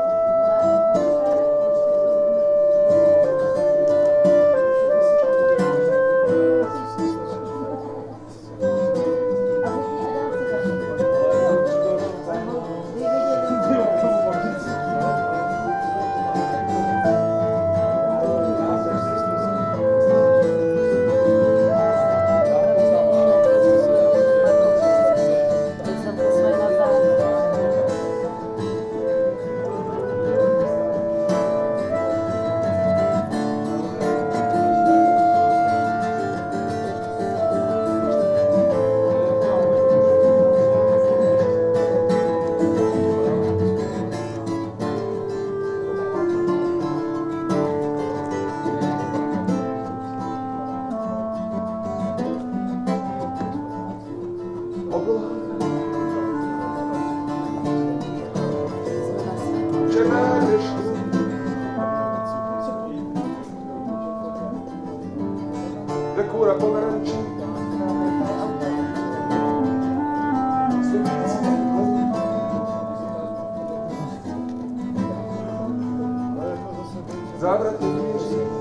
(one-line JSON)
{"title": "vernisage on Ukradená galerie calm deep autumn midnight - vernisage on Ukradená galerie calm deep autumn midnight", "date": "2011-11-27", "description": "Every last Sunday of the month in the midnight there is an opening in Stolen gallery in Český Krumlov", "latitude": "48.81", "longitude": "14.31", "altitude": "486", "timezone": "Europe/Prague"}